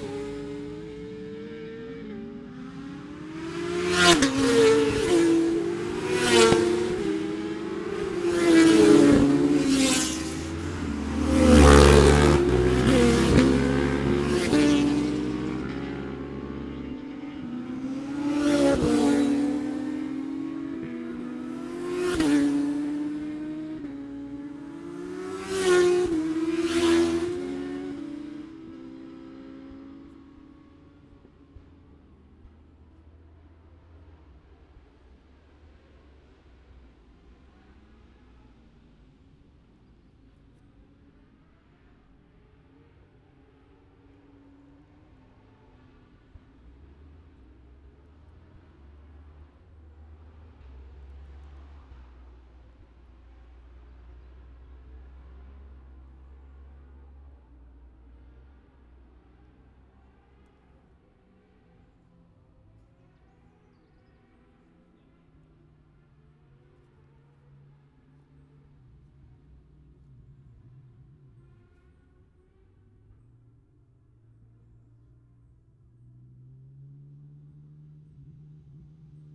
Scarborough, UK - motorcycle road racing 2017 ... 1000cc ...
1000cc practice ... even numbers ... Bob Smith Spring Cup ... Olivers Mount ... Scarborough ... open lavalier mics clipped to sandwich box ...
22 April 2017